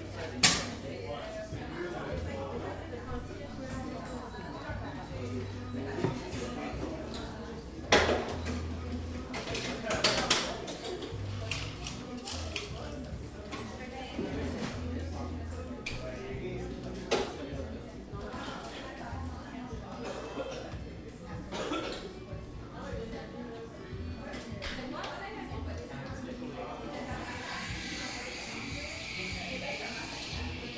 Le Plateau-Mont-Royal, Montreal, QC, Canada - Cafe Rico
Recording of inside activity at Cafe Rico.